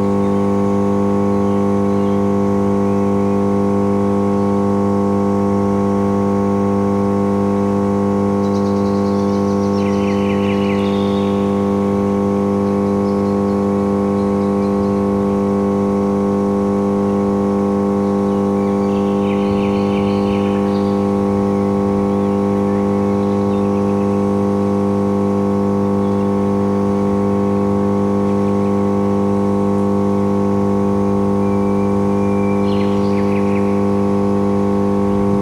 Morasko, campus of UAM univeristy - power station
recorded at the door of a power station. coarse buzz of a transformer. bird chirps echoing of a wall of a nearby building.